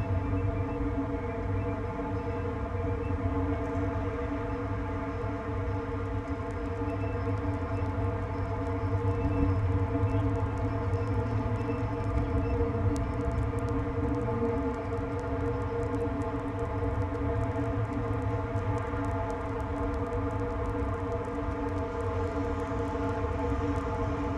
Maribor, Slovenia - one square meter: handrail support poles, second pair
a series of poles along the riverside that once supported handrails for a now-overgrown staircase down to the waters edge. the handrails are now gone, leaving the poles open to resonate with the surrounding noise. all recordings on this spot were made within a few square meters' radius.